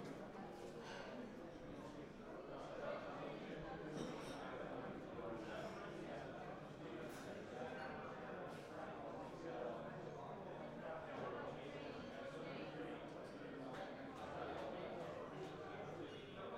{"title": "Offenbach am Main, Germany - Cocktail party", "date": "2012-03-20 12:45:00", "latitude": "50.08", "longitude": "8.78", "altitude": "114", "timezone": "Europe/Berlin"}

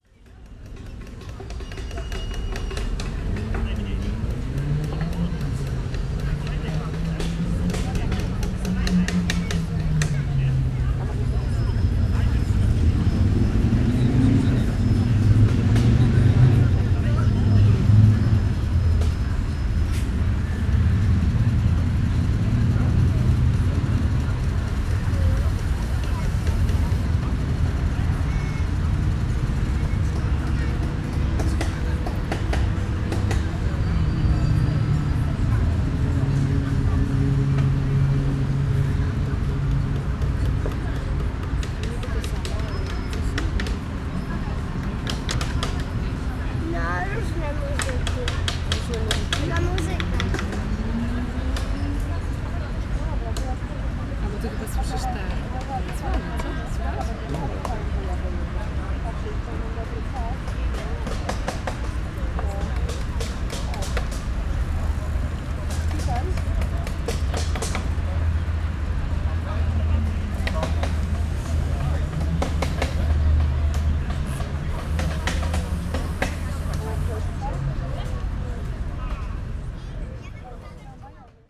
{"title": "Gdańsk, Polska - IKM worshops", "date": "2018-08-11 13:10:00", "description": "Nagranie zrealizowano podczas Pikniku realizowanego przez Instytut Kultury Miejskiej.", "latitude": "54.35", "longitude": "18.65", "altitude": "8", "timezone": "GMT+1"}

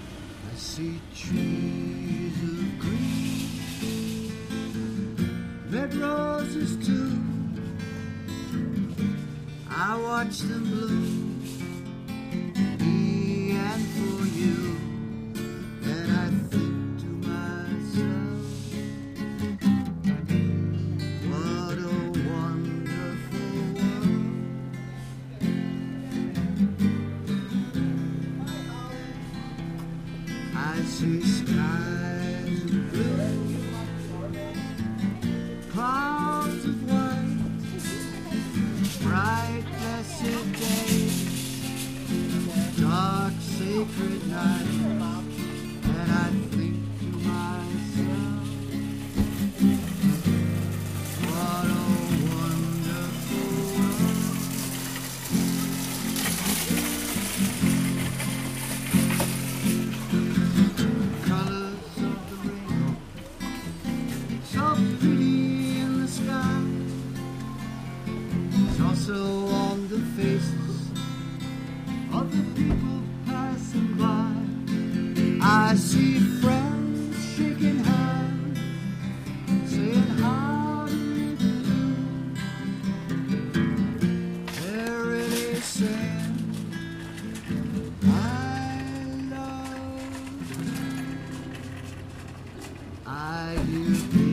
{"title": "Highland Square Mustard Seed Parking Lot, Akron, OH, USA - Busker in Mustard Seed Market Parking Lot", "date": "2017-05-14 14:22:00", "description": "Busker and Akronite, George, performs in the parking lot of neighborhood grocery store, Mustard Seed Market. You will hear cars, shopping carts, and people interacting with George. A short interview with George follows.", "latitude": "41.10", "longitude": "-81.54", "altitude": "336", "timezone": "America/New_York"}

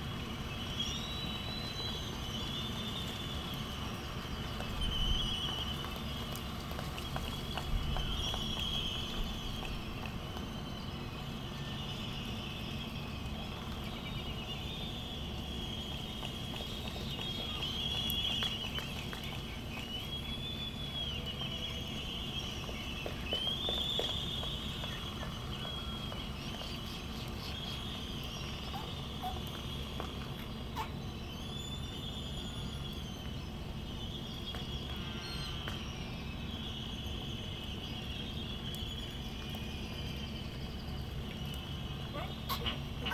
{"title": "United States Minor Outlying Islands - Laysan albatross soundscape ...", "date": "1997-12-25 10:50:00", "description": "Sand Island ... Midway Atoll ... soundscape with laysan albatross ... canaries ... white terns ... black noddy ... Sony ECM 959 one point stereo mic to Sony minidisk ... background noise ...", "latitude": "28.22", "longitude": "-177.38", "altitude": "9", "timezone": "Pacific/Midway"}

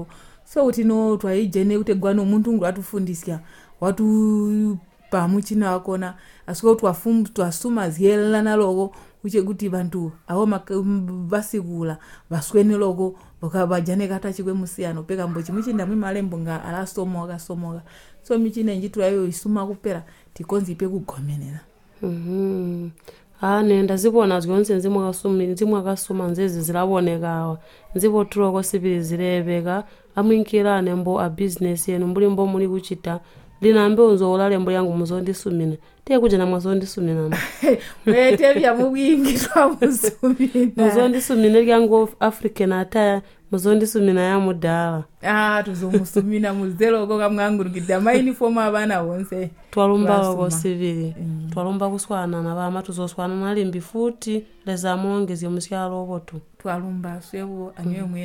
{"title": "Chinonge, Binga, Zimbabwe - We are sewing school uniforms...", "date": "2016-07-25 19:20:00", "description": "Eunice Mwinde interviews a member of a VSnL group in Chinonge Ward (VSnL = Village Saving and Lending). They speak in the local language, ChiTonga. The woman describes the formation of a group of 6 women in 2014. The group entertains a collective project of sewing uniforms and then also included sewing African attire from fashion fabrics. Eunice asks her to describe how they share their work in the group and the benefits the women got from their business. Eunice enquires about the training they received via Zubo workshops to built up their business. The woman describes.\na recording from the radio project \"Women documenting women stories\" with Zubo Trust.\nZubo Trust is a women’s organization in Binga Zimbabwe bringing women together for self-empowerment.", "latitude": "-18.00", "longitude": "27.46", "altitude": "846", "timezone": "GMT+1"}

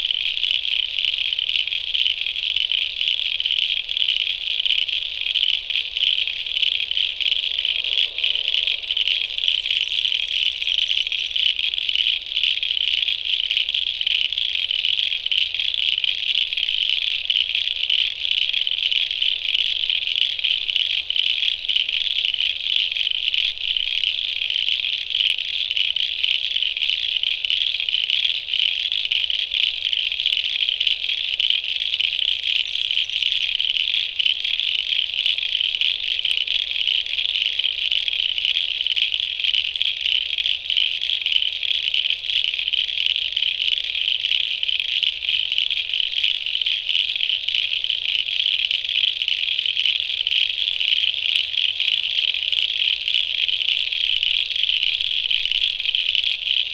{"title": "Royal National Park, NSW, Australia - Leaving my microphones by a coastal lagoon, after 21c Winter's day", "date": "2015-08-01 17:10:00", "description": "First 40 minutes of an overnight recording. A little introduction and then listen as the frogs go from quiet to deafening!\nRecorded with a pair of AT4022's into a Tascam DR-680.", "latitude": "-34.08", "longitude": "151.17", "altitude": "11", "timezone": "Australia/Sydney"}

